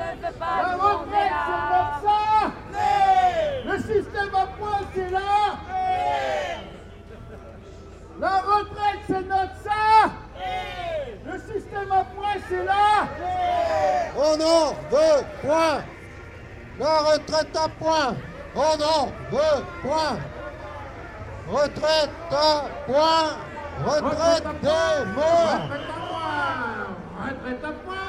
Pl. du Maréchal Foch, Arras, France - Arras - Manifestation - 2020
Arras (Pas-de-Calais)
Sur la place de la gare, manifestation contre la réforme des retraites (sous la présidence d'Emmanuel Macron).
revendications et slogans.
24 January 2020, 10:00